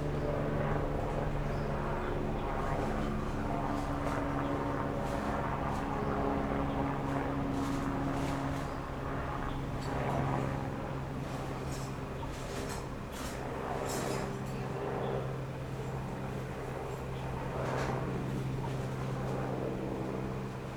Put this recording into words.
Aircraft flying through, Rode NT4+Zoom H4n